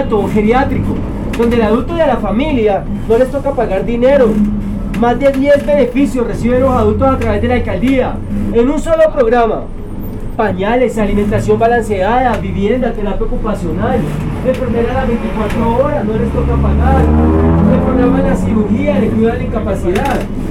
Universidad Nacional de Colombia, Avenida Carrera 30 #, Bogota, Cundinamarca, Colombia - FOr the old people
Alguien que vende esferos para mantener a unos ancianos.